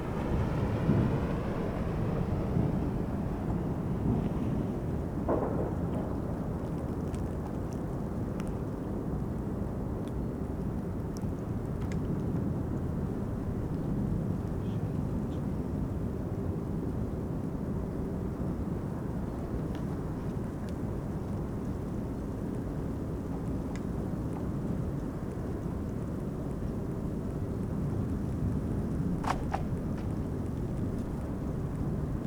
berlin, plänterwald: spree - the city, the country & me: spree river bank
cracking ice of the frozen spree river, some joggers, dry leaves of a tree rustling in the wind, distant drone from the power station klingenberg
the city, the country & me: january 26, 2014